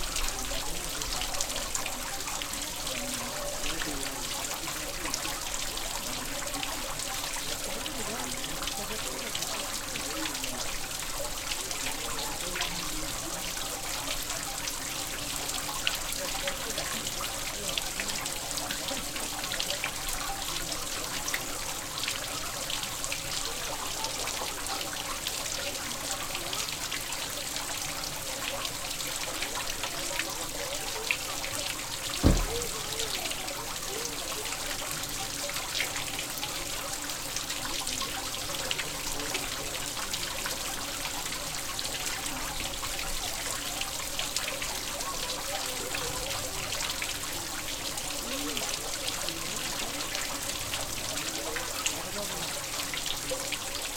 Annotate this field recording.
passage of ecluse, water drop, Captation ZOOMH6